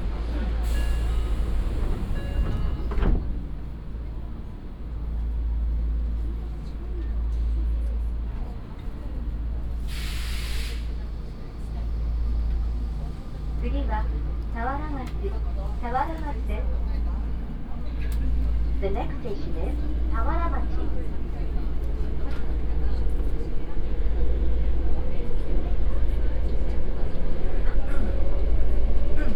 tokyo, inside subway
inside a tokyo subway train
international city scapes - social ambiences and topographic field recordings
Japan, July 2010